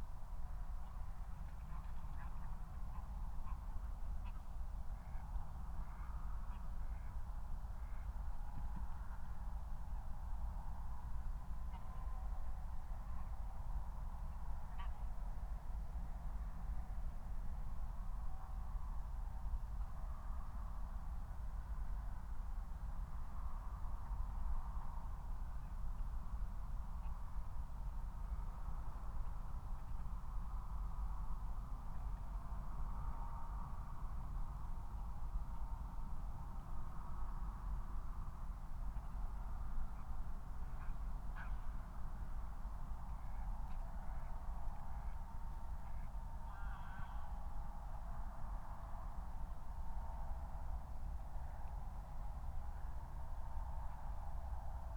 Moorlinse, Berlin Buch - near the pond, ambience
02:19 Moorlinse, Berlin Buch
23 December, ~02:00